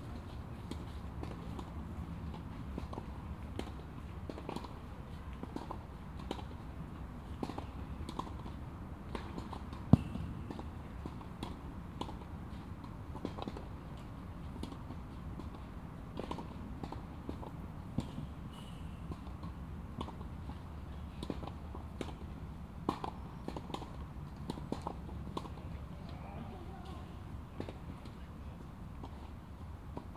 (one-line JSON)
{"title": "Polesie, Łódź, Polska - Sound from tennis court", "date": "2017-08-01 17:29:00", "description": "Sound from tennis court - binaural recordind", "latitude": "51.75", "longitude": "19.44", "altitude": "197", "timezone": "Europe/Warsaw"}